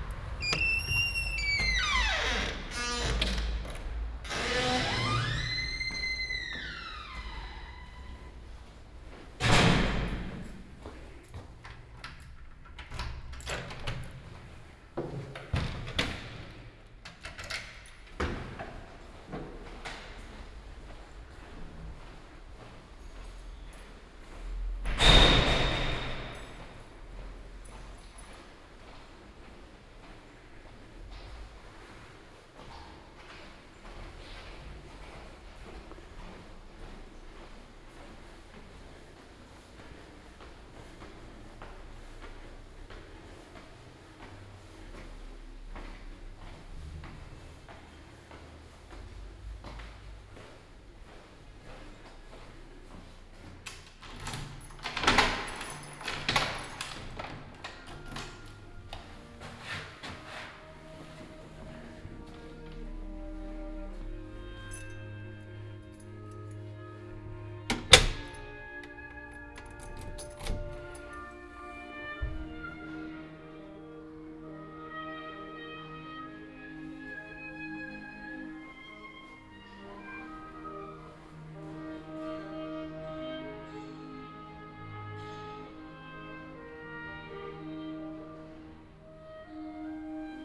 “Monday May 18th walk at noon in the time of covid19” Soundwalk
Chapter LXXX of Ascolto il tuo cuore, città. I listen to your heart, city.
Monday May 18th 2020. Walk all around San Salvario district, Turin, sixty nine days after (but day fifteen of Phase II and day I of Phase IIB) of emergency disposition due to the epidemic of COVID19.
Start at 11:50 a.m., end at h. 00:36 p.m. duration of recording 45’47”
The entire path is associated with a synchronized GPS track recorded in the (kml, gpx, kmz) files downloadable here:
18 May, ~12:00